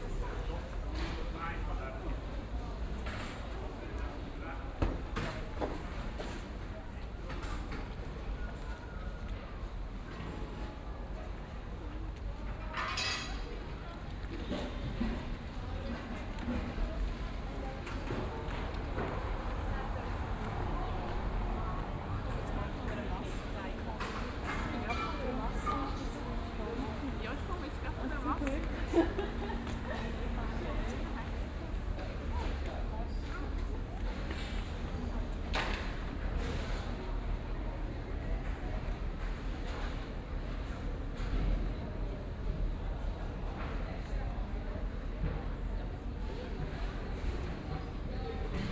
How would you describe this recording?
Walk from the Rathaus to the record shop, afternoon, the restaurants and bars prepare for the party at the evening, cars, no busses.